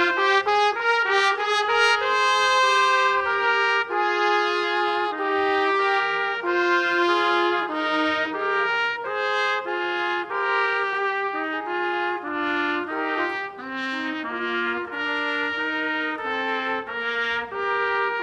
{"title": "vianden, castle, flourish", "date": "2011-08-08 17:54:00", "description": "A second example performed of the same ensemble during the medieval castle festival. In the background sounds of visitors and some tambour percussion outside of the castle.\nVianden, Schloss, Fanfare\nEin zweites Beispiel, gespielt von derselben Gruppe während des Mittelalterfestes. Im Hintergrund Geräusche von Besuchern und einige Trommelschläge außerhalb des Schlosses.\nVianden, château, fioriture\nUn deuxième exemple exécuté par le même groupe durant le festival médiéval au château. Les bruits des visiteurs dans le fond et des bruits de percussions de tambour à l’extérieur du château.\nProject - Klangraum Our - topographic field recordings, sound objects and social ambiences", "latitude": "49.94", "longitude": "6.20", "altitude": "291", "timezone": "Europe/Luxembourg"}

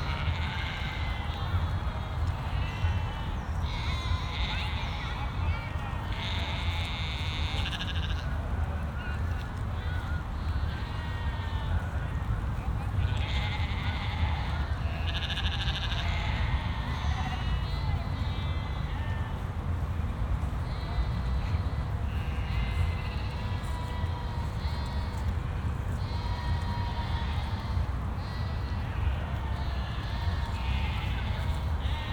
{"title": "Riehl, Köln, Deutschland - in the Rhein meadows: sheep, geese, a shot", "date": "2012-09-10 19:20:00", "description": "Cologne, sheep in the meadows at river Rhein near Muelheim, a couple of geese flying around, a sudden shot. traffic hum from then nearby bridges.\n(LS5, Primo EM172 binaural)", "latitude": "50.96", "longitude": "6.99", "altitude": "43", "timezone": "Europe/Berlin"}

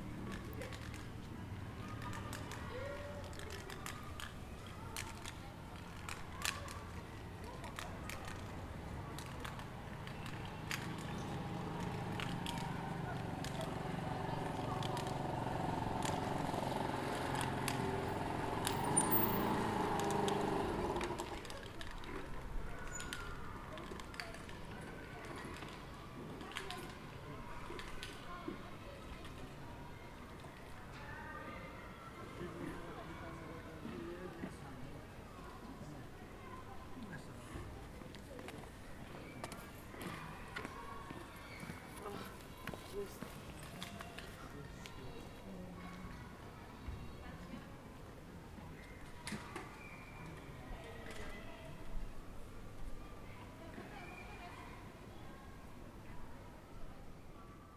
{"title": "Psorouli, Corfu, Greece - Psorouli Square - Πλατεία Ψωρούλη", "date": "2019-04-17 13:43:00", "description": "The sound of an aluminium bottle on the ground. People chatting and passing by.", "latitude": "39.62", "longitude": "19.92", "altitude": "22", "timezone": "Europe/Athens"}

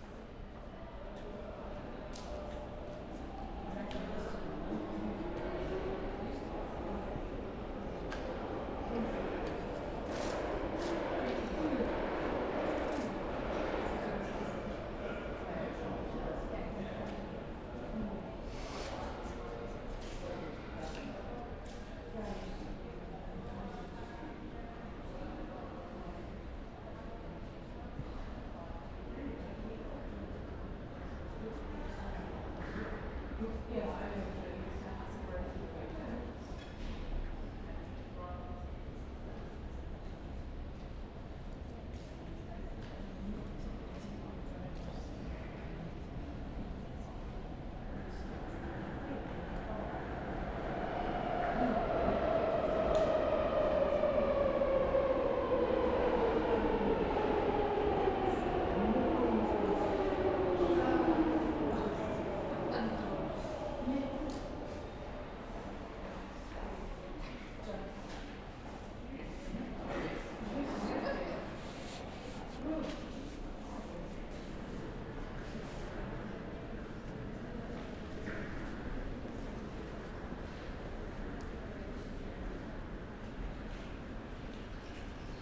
{"title": "Oxford Street, London, Royaume-Uni - Tube Oxford Circus", "date": "2016-03-16 10:57:00", "description": "Waiting fo the tube in Oxford Circus Station, Zoom H6", "latitude": "51.52", "longitude": "-0.14", "altitude": "41", "timezone": "Europe/London"}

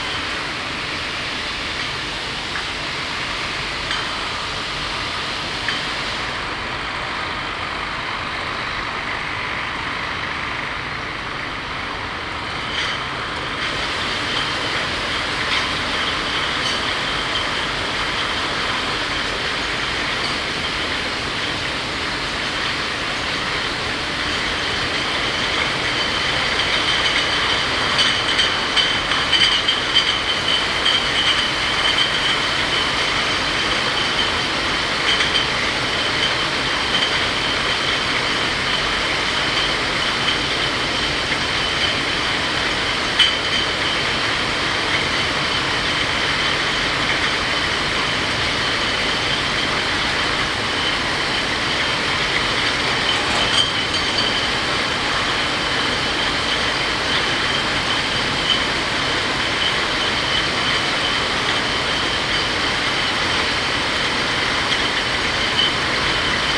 {"title": "Altenessen - Süd, Essen, Deutschland - essen, private brewery stauder, bottling", "date": "2014-04-29 11:00:00", "description": "In der Privatbrauerei Stauder. Der Klang der Flaschenabfüllungsanlage.\nInside the private brewery Satuder. The sound of the bottling.\nProjekt - Stadtklang//: Hörorte - topographic field recordings and social ambiences", "latitude": "51.50", "longitude": "7.02", "altitude": "53", "timezone": "Europe/Berlin"}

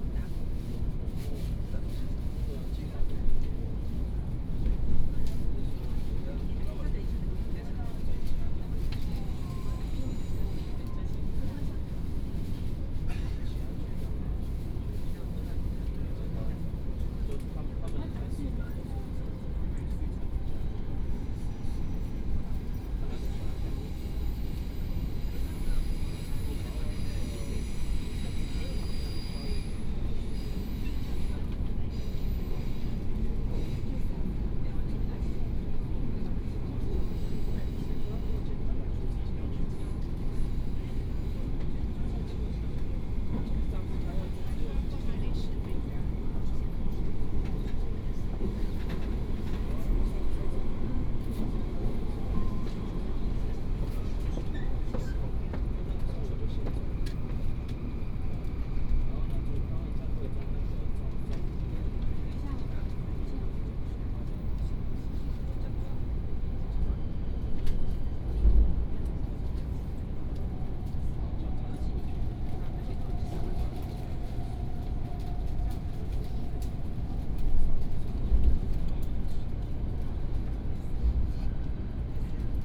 {"title": "Banqiao District, New Taipei City - High - speed railway", "date": "2017-01-17 09:14:00", "description": "High - speed railway, Train message broadcast", "latitude": "25.03", "longitude": "121.48", "timezone": "GMT+1"}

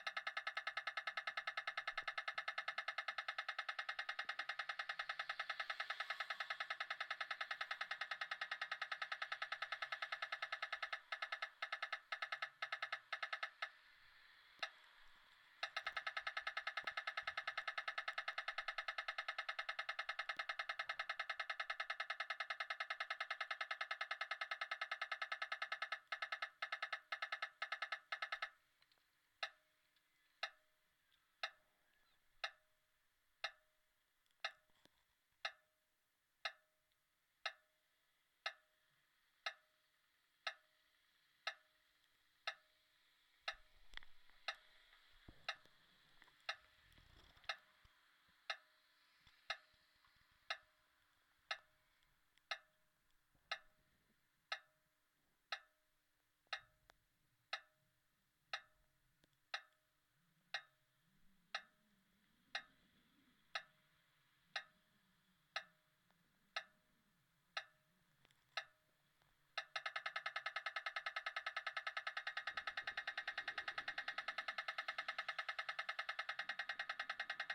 {"title": "Den Haag, Margarethaland, Den Haag, Nederland - Traaficlight for the blind", "date": "2020-07-11 14:15:00", "description": "Trafficlights for the blind at the crossing Hofzichtlaan / Margarethaland. Light are sound operated.\nRecorded with a Tascam DR100 MKlll and 2 contactmicrophones", "latitude": "52.09", "longitude": "4.37", "altitude": "3", "timezone": "Europe/Amsterdam"}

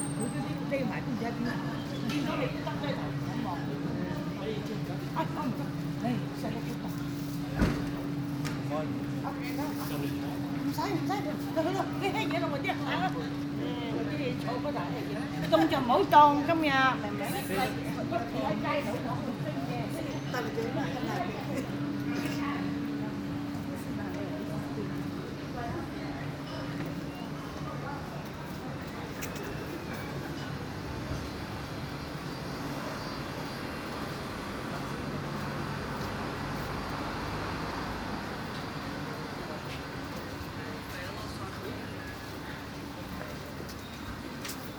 {"title": "Amsterdam, Nederlands - Asian people talking", "date": "2019-03-28 15:30:00", "description": "Asian people talking loudly into the street and quiet street ambiance on a sunny afternoon.", "latitude": "52.37", "longitude": "4.90", "altitude": "7", "timezone": "Europe/Amsterdam"}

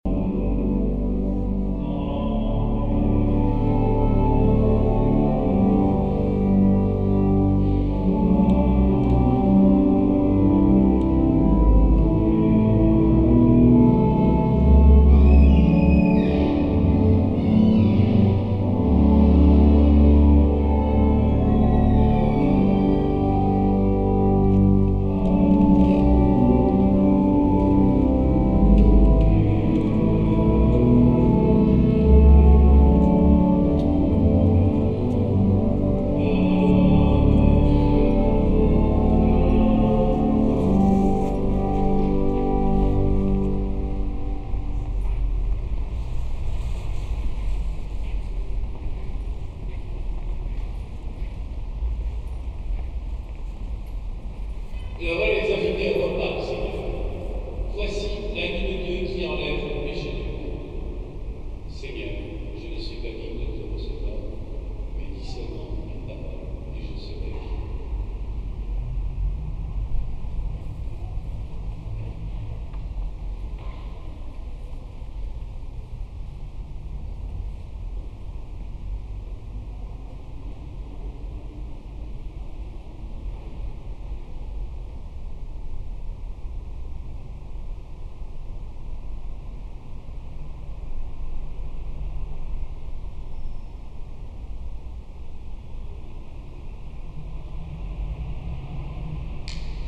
{"title": "paris, church saint paul, ceremony", "date": "2009-10-13 17:06:00", "description": "in the big catholic church while a praying ceremony is going on. the church organ and the singing of the vistors, a squeeking door\ninternational cityscapes - social ambiences and topographic field recordings", "latitude": "48.85", "longitude": "2.36", "altitude": "47", "timezone": "Europe/Berlin"}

